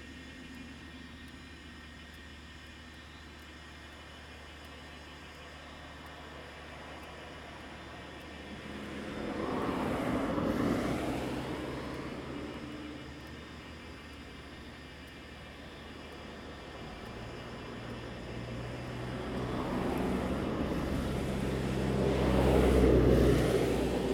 Insects sound, Cicadas sound, Beside the mountain road, Traffic Sound, Very Hot weather
Zoom H2n MS+XY